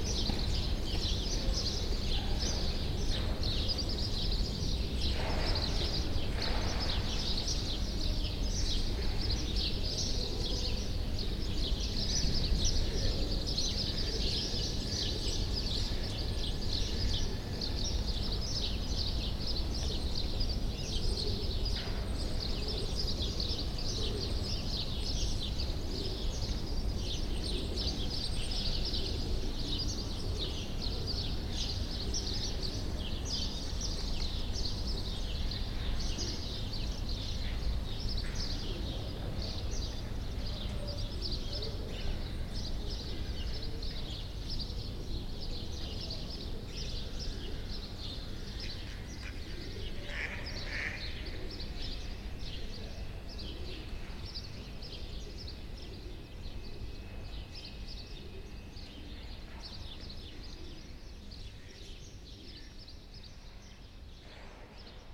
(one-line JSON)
{"title": "Hribarjevo nabrežje, Ljubljana, Slovenia - At the Ljubljanica river embankment", "date": "2020-03-28 08:21:00", "description": "A few minutes spend along Ljubljanica river embankment listening to the almost silent Saturday morning under #Stayathome #OstaniDoma quarantine situation. It has been a very long time since one could enjoy this kind of soundscape in the city center.", "latitude": "46.05", "longitude": "14.51", "altitude": "296", "timezone": "Europe/Ljubljana"}